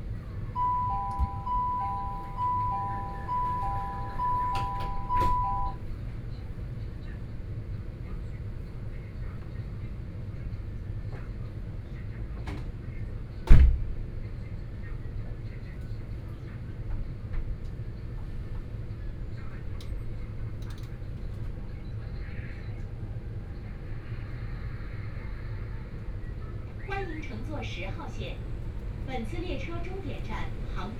Huangpu District, Shanghai - Line 10 (Shanghai Metro)
from East Nanjin Road Station to Laoximen Station, Binaural recordings, Zoom H6+ Soundman OKM II